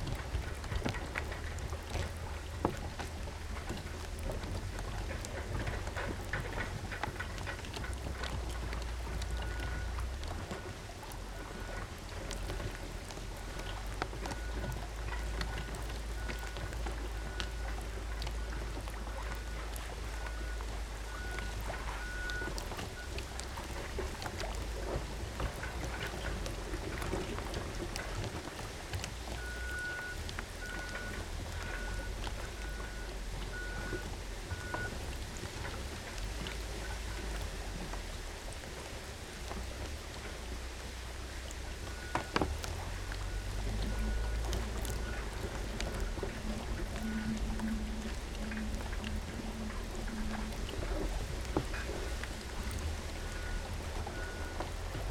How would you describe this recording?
Lago Azul fluvial beach structure balacing on water, waves and a nearby buldozer in the background. Recorded with a pair of DYI Primo 172 capsules in AB stereo configuration onto a SD mixpre6 audio recorder.